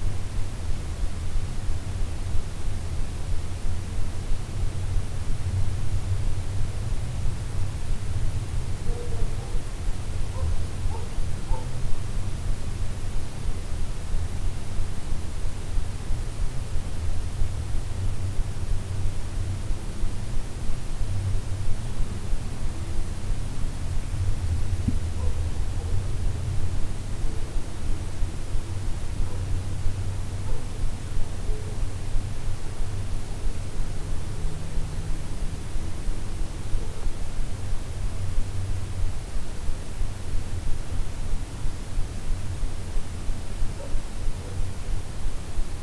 Płonina, Płonina, Polska - Riuny Zamku Niesytno - Dźwięk zastygły w czasie.
Projekt „Dźwięk zastygły w czasie” jest twórczym poszukiwaniem w muzyce narzędzi do wydobycia i zmaterializowania dźwięku zaklętego w historii, krajobrazie, architekturze piastowskich zamków Dolnego Śląska. Projekt dofinansowany ze środków Ministerstwa Kultury i Dziedzictwa Narodowego.